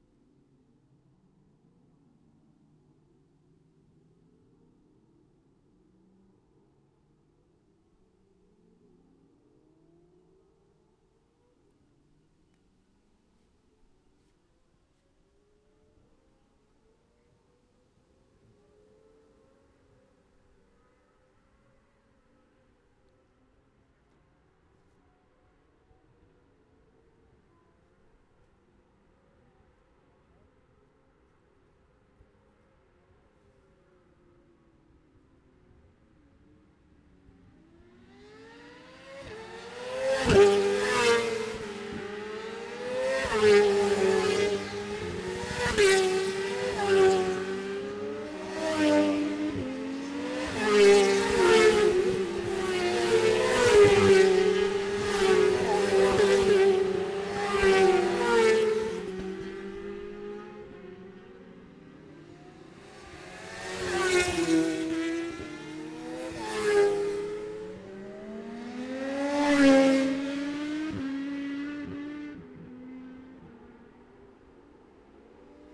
Jacksons Ln, Scarborough, UK - Gold Cup 2020 ...
Gold Cup 2020 ... 600 odd Qualifying ... Memorial Out ... dpas bag MixPre3 ...